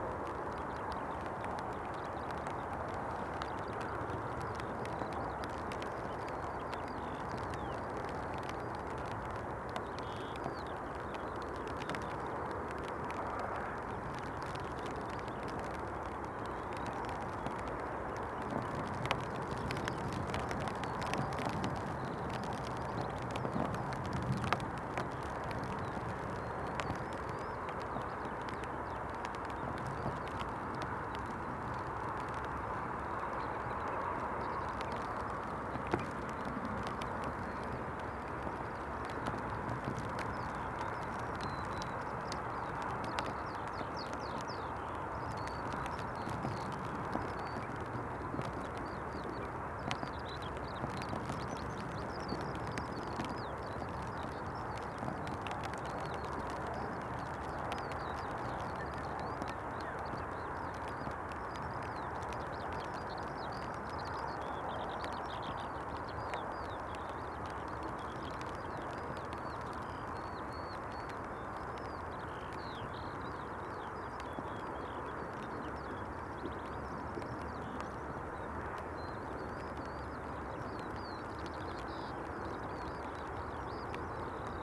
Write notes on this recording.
The Drive Moor Place Woodlands Woodlands Avenue Westfield Grandstand Road, A lark sings, from an unseen perch, somewhere in the rough grass, The squall hits, a chocolate labrador comes to explore, Crows criss-cross the grassland below me